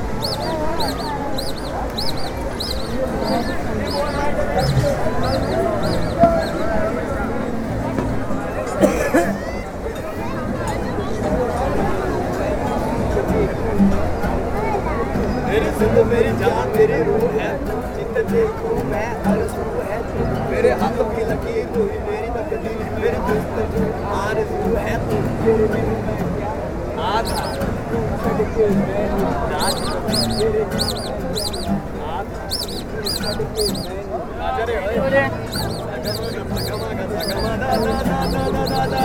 Theosophical Housing Colony, Juhu, Mumbai, Maharashtra, Inde - Juhu beach by night
At night, families enjoy their meal or a bath. Live music is played and balloon sellers try to attract customers.